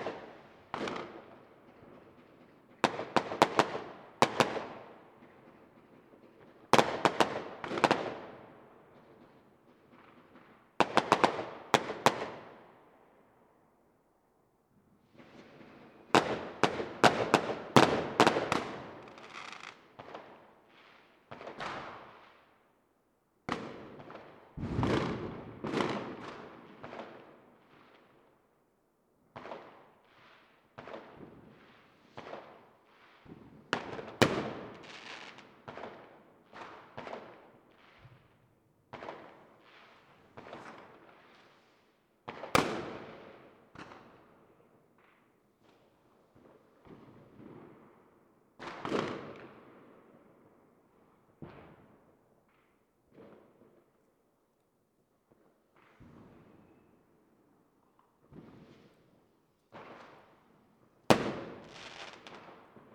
bad freienwalde/oder, uchtenhagenstraße: backyard - the city, the country & me: fireworks
fireworks on new year's eve
the city, the country & me: january 1, 2016